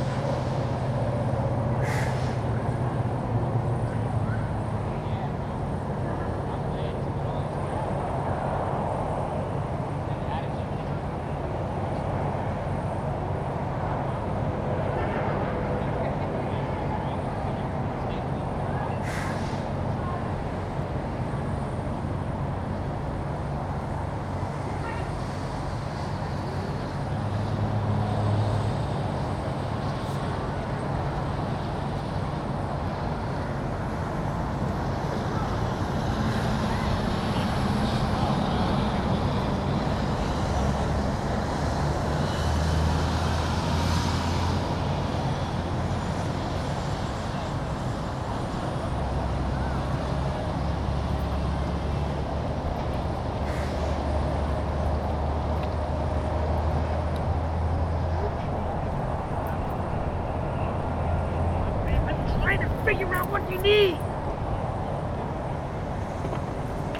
Port Wentworth, GA, USA - Georgia Welcome Center
The parking lot of a Georgia welcome center/rest stop. Cars, trucks, birds, and people can all be heard.
[Tascam Dr-100mkiii, on-board uni mics]
Georgia, United States, 28 December 2021, 12:29